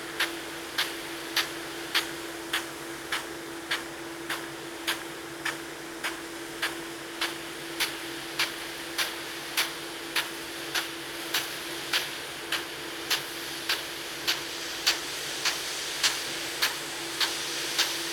Luttons, UK - crop irrigation sprayer ...
Crop irrigation sprayer ... spraying a potato crop ... a weighted lever pushed out by the water swings back and 'kicks' the nozzle round a notch each time ... recorded using a parabolic reflector ...
Malton, UK, 10 August 2013, 07:30